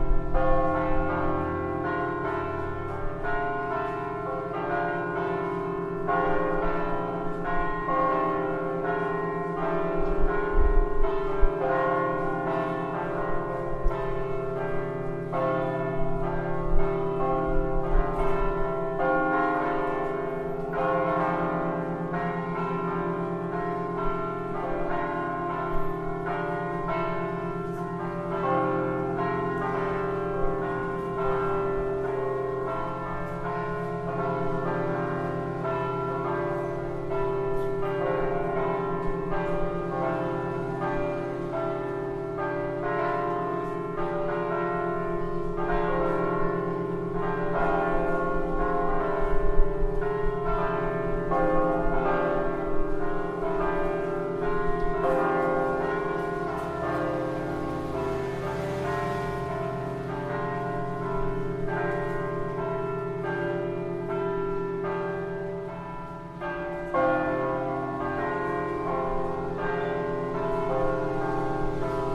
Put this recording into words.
Sunday, The church bells ringing. I listening by my windows.